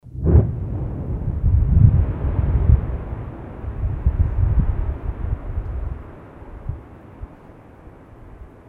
{"title": "wülfrath, abbaugelände fa rheinkalk, sprengung", "date": "2008-06-24 22:29:00", "description": "früjahr 07 mittags tägliche sprengung, in europas grösstem kalkabbaugebiet\nproject: :resonanzen - neandereland soundmap nrw - sound in public spaces - in & outdoor nearfield recordings", "latitude": "51.32", "longitude": "7.04", "altitude": "125", "timezone": "Europe/Berlin"}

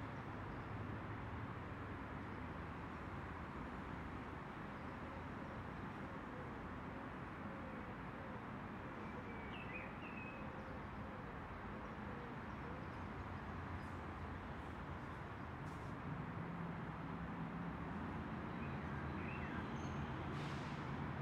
{"title": "Botanique, Rue Royale, Saint-Josse-ten-Noode, Belgium - Birds and traffic", "date": "2013-06-19 15:30:00", "description": "Sitting on a bench and listening intently, perceiving the thick, soupy quality of so much traffic encircling the park. Wondering at the way birdsong can rise above the sound of car engines, and enjoying some blackbirds and wood pigeons busy in the trees. Audio Technica BP4029 and FOSTEX FR-2LE.", "latitude": "50.85", "longitude": "4.36", "altitude": "43", "timezone": "Europe/Brussels"}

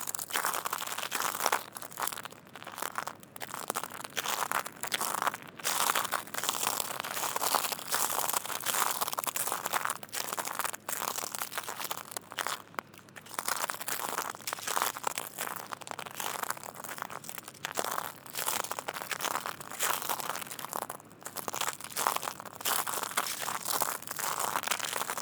{"title": "IJmuiden, Nederlands - Walking on shells", "date": "2019-03-29 07:00:00", "description": "Walking on shells. It's an accumulation of Solen. It's the particularity of the Zuid-Holland beaches.", "latitude": "52.44", "longitude": "4.56", "timezone": "Europe/Amsterdam"}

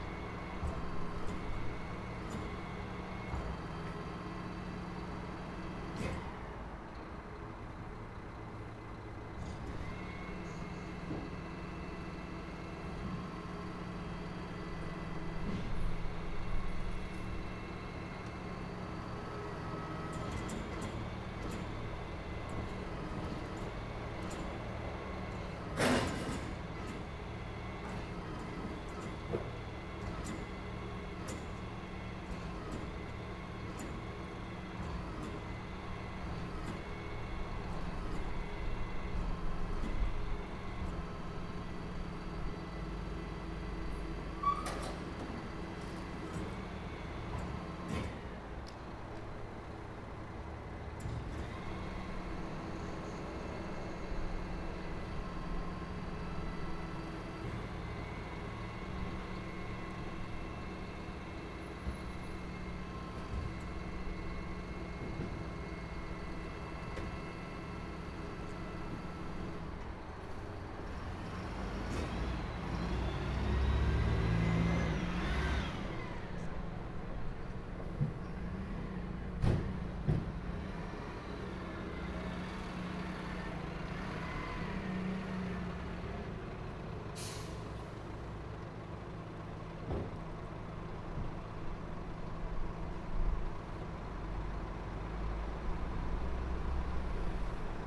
{"title": "Skehacreggaun, Co. Limerick, Ireland - Mungret recycling centre", "date": "2018-07-18 15:30:00", "description": "Listening to recycling #WLD2018", "latitude": "52.64", "longitude": "-8.68", "altitude": "4", "timezone": "Europe/Dublin"}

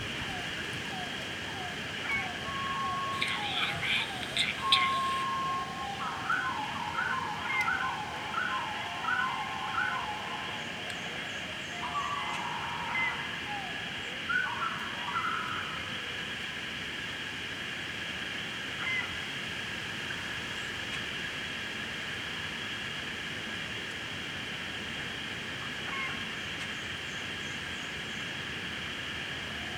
{"title": "Living Arts, Kagawong, ON, Canada - Octet - outdoor sound installation", "date": "2016-07-09 14:00:00", "description": "Visually, the piece presents as eight SM58 microphones hanging from branches of a tree, in this case a cedar. The microphones are used 'backwards', as tiny speakers. The sounds heard are from the collection of William WH Gunn, early Canadian environmental sound recordist (provided courtesy of the Macaulay Library, Cornell University), and are all birdsongs recorded in various Ontario locations in 1951-52, including on Manitoulin Island. Periodically Gunn can be heard introducing a recording, and the recording follows. All the birdsongs are played back slowed down to 20% of their original speed.\nRecorded with Zoom H2n placed under the tree.", "latitude": "45.90", "longitude": "-82.26", "altitude": "199", "timezone": "America/Toronto"}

